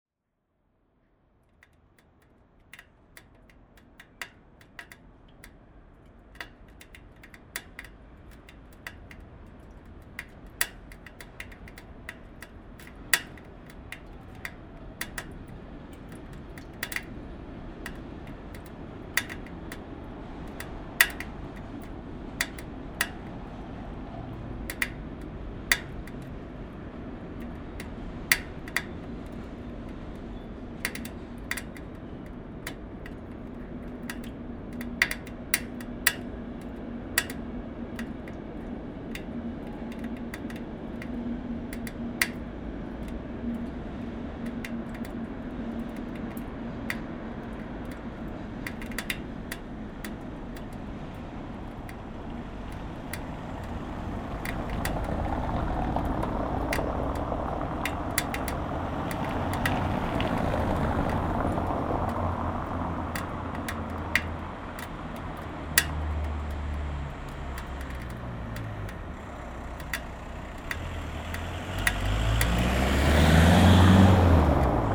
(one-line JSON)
{"title": "Brussel, Belgium - Brussels street", "date": "2018-08-25 10:30:00", "description": "Water falling into a gutter, and cars driving on the cobblestones.", "latitude": "50.84", "longitude": "4.34", "altitude": "20", "timezone": "GMT+1"}